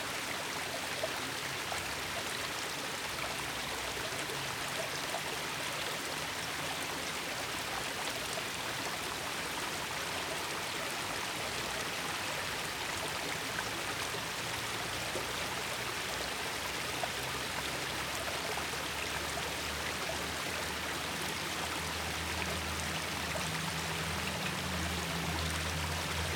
Saint-Victor, Marseille, Frankreich - Marseille, Jardin de la colline Puget - Small stream
Marseille, Jardin de la colline Puget - Small stream.
[Hi-MD-recorder Sony MZ-NH900, Beyerdynamic MCE 82]
Marseille, France, August 2014